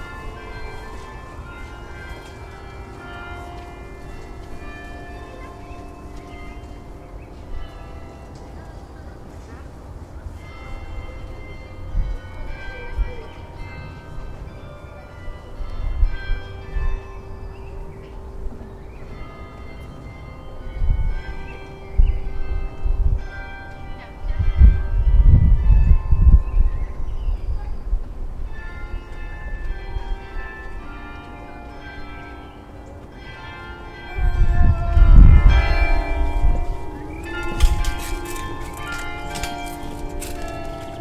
Stulcova, Vysehrad
The carillion of the Saint Peter and Pavel Catedral at Vysehrad.